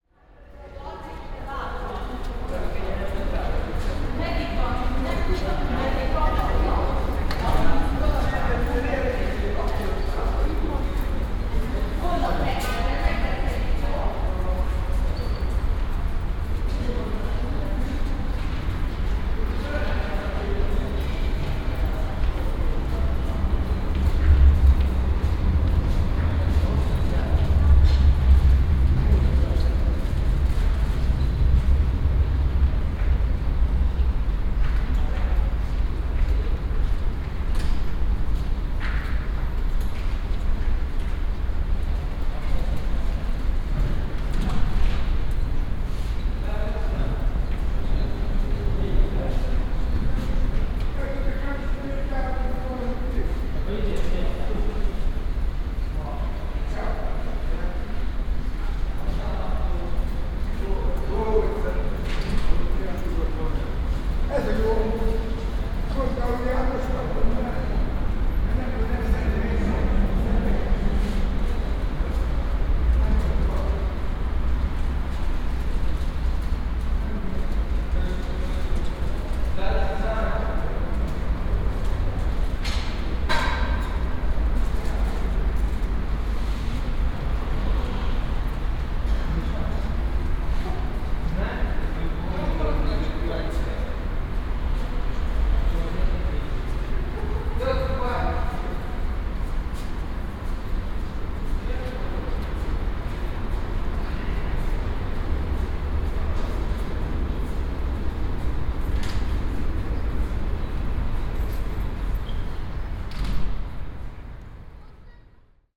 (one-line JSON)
{"title": "Margit híd, Budapest, Hungary - (156 BI) Metro at Margit hid", "date": "2017-06-14 14:56:00", "description": "Binaural recording on a metro platform at Margit hid.\nRecorded with Soundman OKM on Sony PCM D100", "latitude": "47.52", "longitude": "19.04", "altitude": "107", "timezone": "Europe/Budapest"}